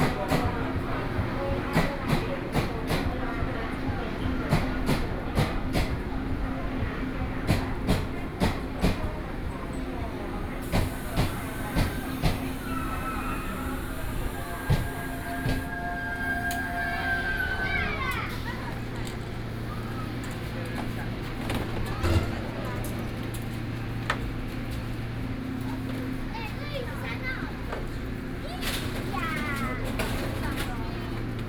Zhongli Station, Taoyuan County - platform
On the platform waiting for the train, Zoom H4n+ Soundman OKM II
Zhongli City, Taoyuan County, Taiwan, 12 August 2013